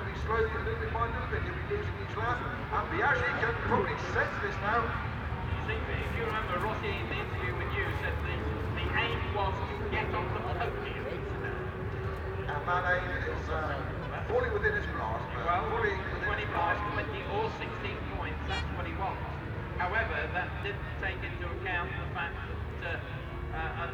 500 cc motorcycle race ... part two ... Starkeys ... Donington Park ... the race and associated noise ... Sony ECM 959 one point stereo mic to Sony Minidisk ...
14 July 2002, ~13:00